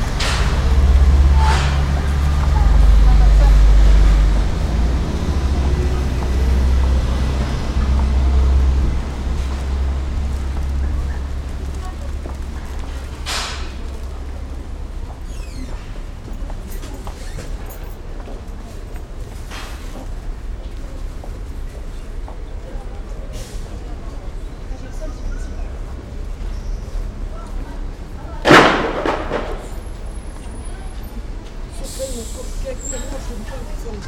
Massy, Gare RER Massy-Palaiseau, passerelle - Gare RER Massy-Palaiseau, Massy, passerelle
France, Massy, RER B
Massy, France, 10 September 2009, 18:35